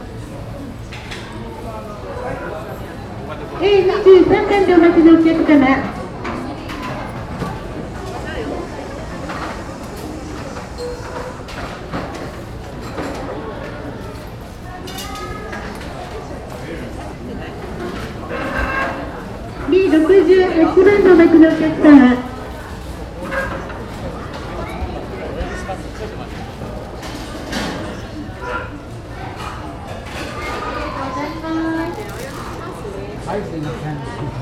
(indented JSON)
{
  "title": "nagano expy, highway restaurant",
  "date": "2010-07-25 16:27:00",
  "description": "inside a crowded highway restaurant at noon on a sunday - anouncements of the cook and waiters\ninternational city scapes and social ambiences",
  "latitude": "36.20",
  "longitude": "137.93",
  "altitude": "610",
  "timezone": "Asia/Tokyo"
}